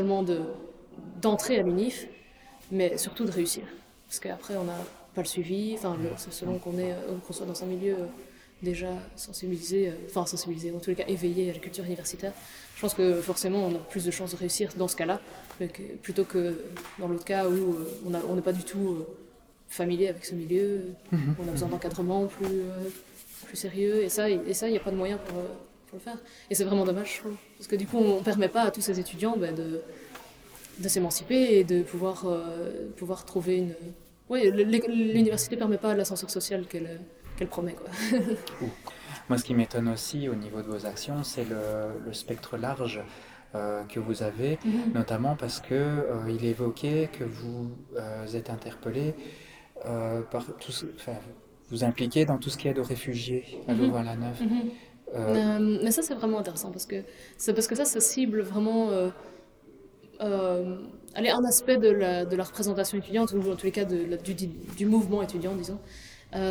Centre, Ottignies-Louvain-la-Neuve, Belgique - Social elections
Hélène Jané-Aluja is the main representative of a social list called Cactus Awakens. This list defends students rights nearby the rector. Hélène describes in great details the list belief, and her personal involvement. Interview was made in a vast auditoire with reverb, it wasn't easy ! As she explains, there's no place to talk without a beer and a free-access social local would be useful.
Ottignies-Louvain-la-Neuve, Belgium, 24 March